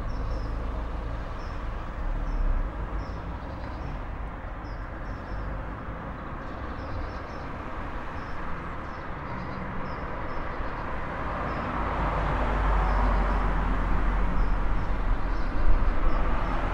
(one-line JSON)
{"title": "Suburban Manchester", "date": "2010-02-27 23:16:00", "description": "I wish the cars would stop, so that I can enjoy the birdsong...", "latitude": "53.54", "longitude": "-2.28", "altitude": "103", "timezone": "Europe/London"}